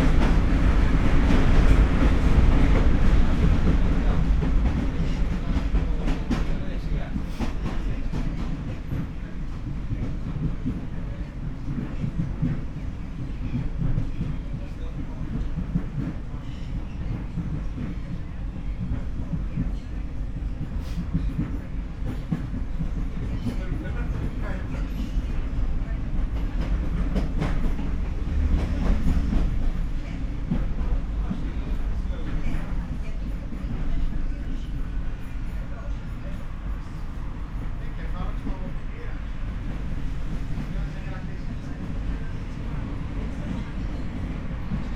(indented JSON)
{
  "title": "Athen, Moschato - metro ride on train line 1",
  "date": "2016-04-05 21:40:00",
  "description": "metro train soundscape on line 1\n(Sony PCM D50, Primo EM172)",
  "latitude": "37.95",
  "longitude": "23.68",
  "altitude": "8",
  "timezone": "Europe/Athens"
}